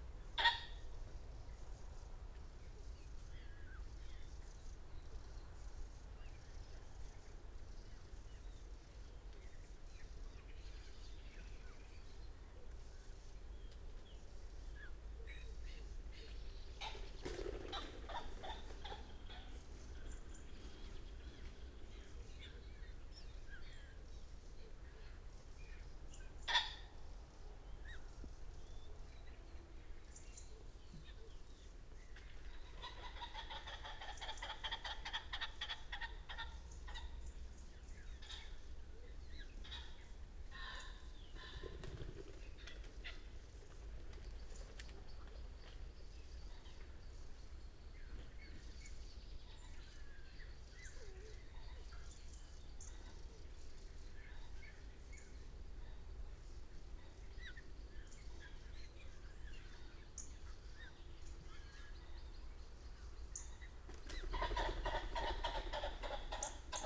Luttons, UK - pheasants leaving roost ...
pheasants leaving roost ... dpa 4060s in parabolic to MixPre3 ... bird calls ... crow ... robin ... wren ... blackbird ... treecreeper ... red-legged partridge ... redwing ...
Malton, UK, 25 November 2020